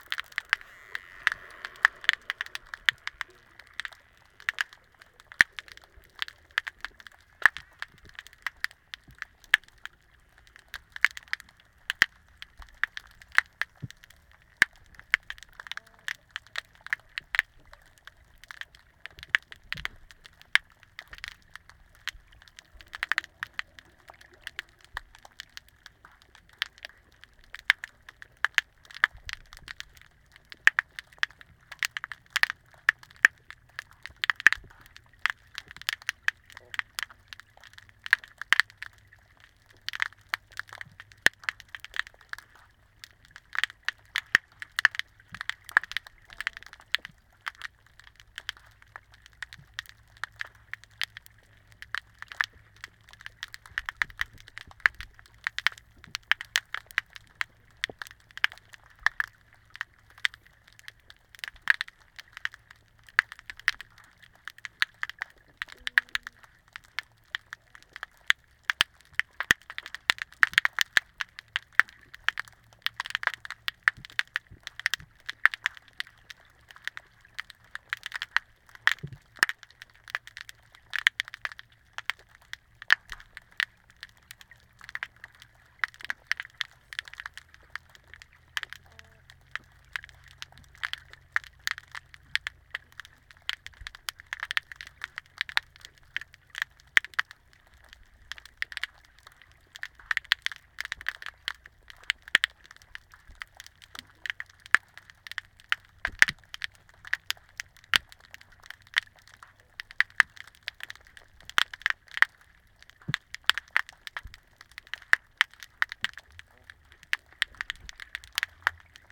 Bayfront Park, Longboat Key, Florida, USA - Bayfront Park
Underwater hydrophone recording of pistol shrimp and soniferous fish off dock in Bayfront Park.